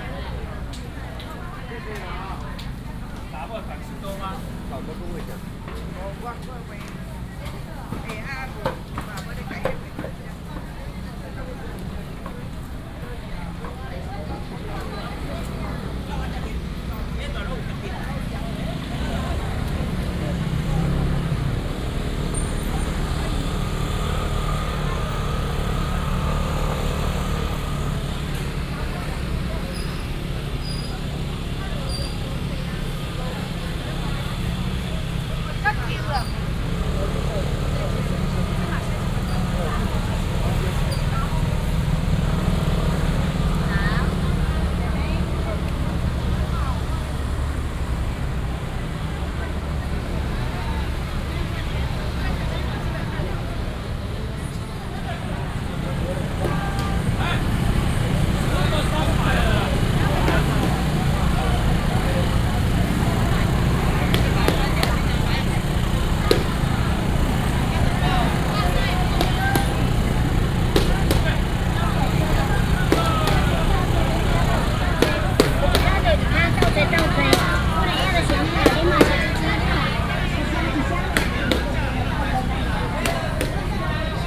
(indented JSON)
{
  "title": "Datong St., Shalu Dist., Taichung City - A variety of vendors",
  "date": "2017-01-19 10:12:00",
  "description": "Traditional markets, Very noisy market, Street vendors selling voice, A lot of motorcycle sounds",
  "latitude": "24.24",
  "longitude": "120.56",
  "altitude": "16",
  "timezone": "GMT+1"
}